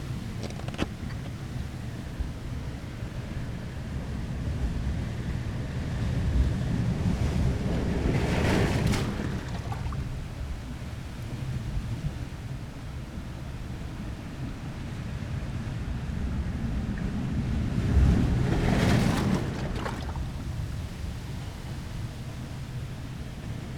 Puerto De Sardina, Gran Canaria, between stones
Las Palmas, Spain, 26 January 2017